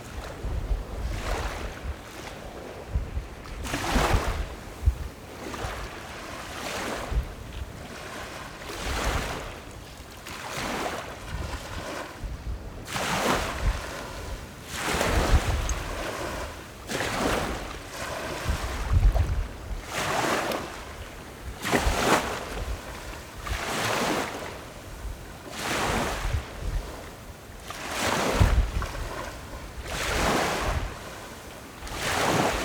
井垵里, Magong City - Small beach
Wave and tidal, Small beach
Zoom H6 + Rode NT4